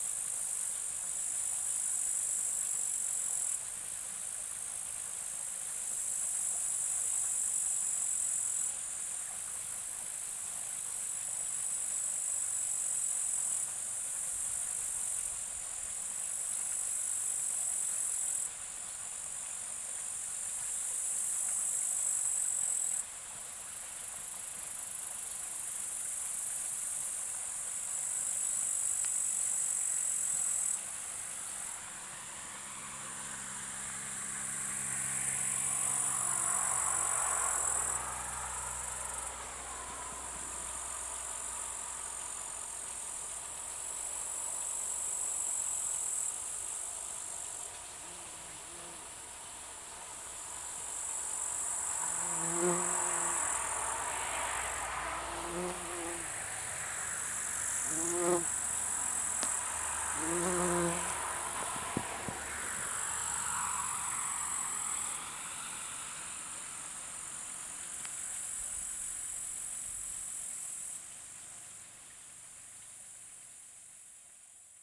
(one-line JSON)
{"title": "Zákoutí, Blatno, Czechia - Bílina soundscape with bumblebee", "date": "2019-08-04 14:35:00", "description": "Bumblebee next to the side creek of Bilina river", "latitude": "50.54", "longitude": "13.34", "timezone": "GMT+1"}